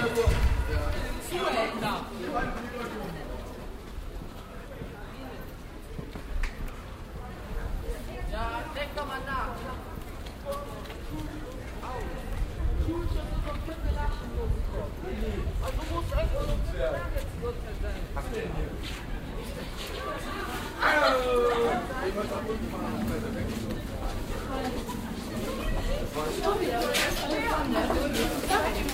schulgebäude und schueler mittags, gang zur bushaltestelle
project: :resonanzen - neanderland soundmap nrw: social ambiences/ listen to the people - in & outdoor nearfield recordings
schulzentrum, gerresheimer landstrasse, 19 April 2008, 10:44